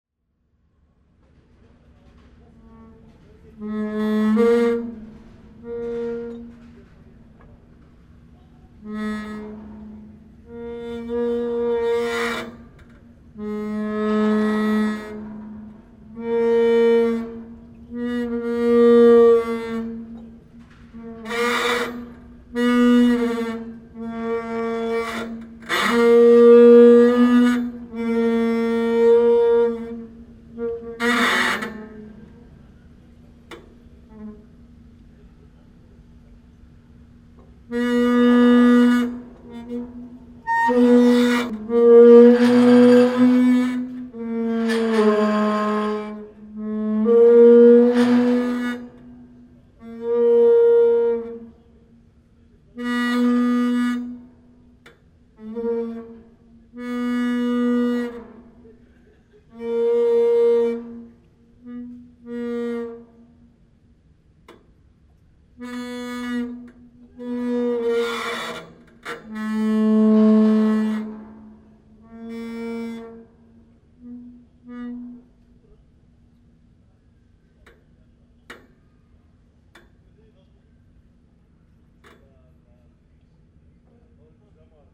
On the 't Steen pontoon, terrible gnashing of the pillars, while rising tide on the Schelde river.